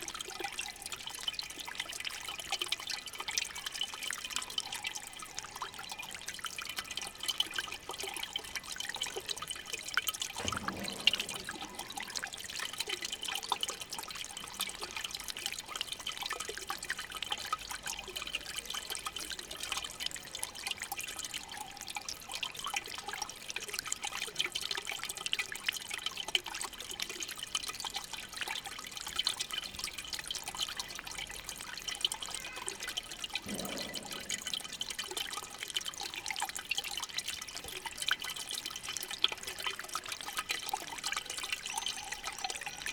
Rue de Lesseps 75020 Paris
Vanne dégout, eau
world listening day